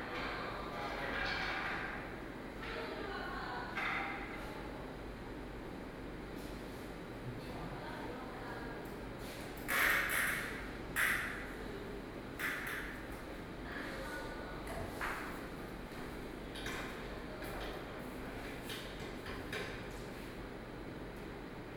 VTartsalon, Taipei - Exhibition layout
Exhibition layout, Construction, Sony PCM D50 + Soundman OKM II
Zhongshan District, Taipei City, Taiwan, 30 July, ~17:00